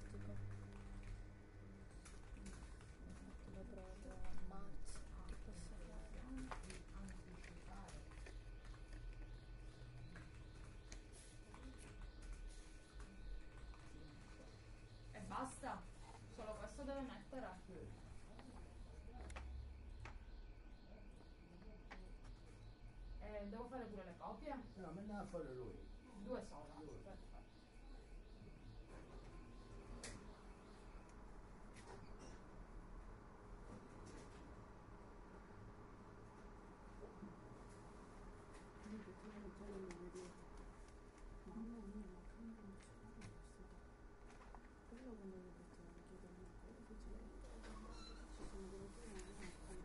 In copisteria, h 10,30 25/01/2010
Copisteria, (romanlux) (edirol r-09hr)
PA, SIC, Italia